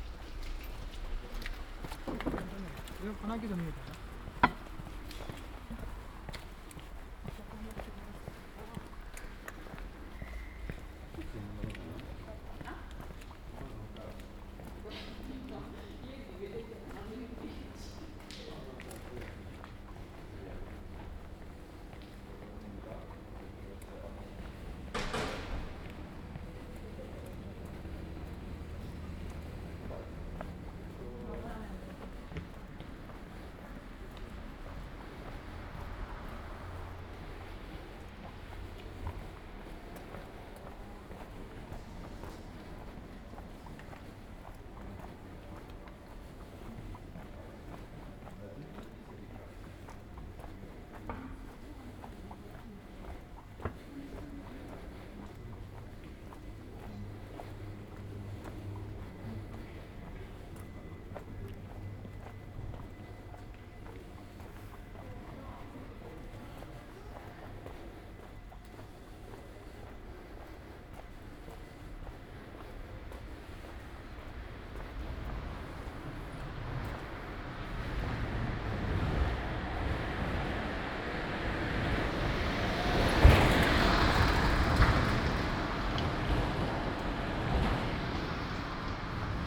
Ascolto il tuo cuore, città. I listen to your heart, city. Chapter V - Supermercato serale ai tempi del COVID19 Soundwalk
Thursday March 12 2020. Walking in San Salvario district, Turin two days after emergency disposition due to the epidemic of COVID19.
Start at 8:23 p.m. end at 9:00 p.m. duration of recording 36'42''
The entire path is associated with a synchronized GPS track recorded in the (kml, gpx, kmz) files downloadable here:
Piemonte, Italia, 12 March 2020, 20:23